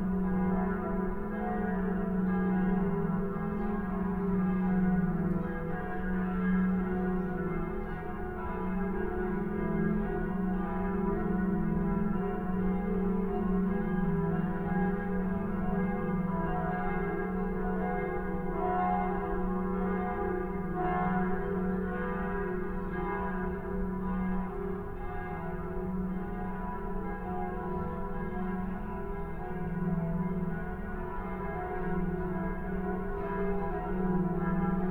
Tura St, Jerusalem, Israel - Cave at Bloomfield park
Cave at Bloomfield park
Church bells